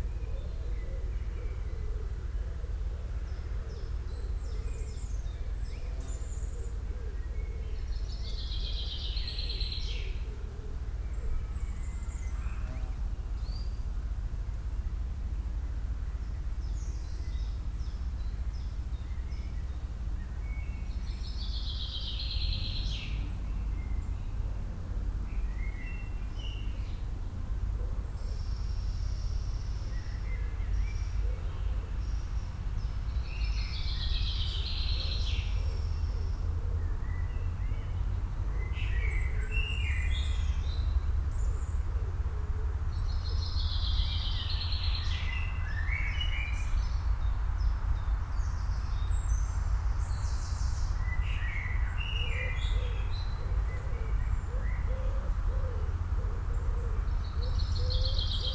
Holt County Park, Edgefield Hill, Holt - Holt County Park
Holt Country Park is set in 100 acres of mixed woodland. Bird noise, distant traffic rumble, aircraft passes overhead.
Recorded with a Zoom H1n with 2 Clippy EM272 mics arranged in spaced AB.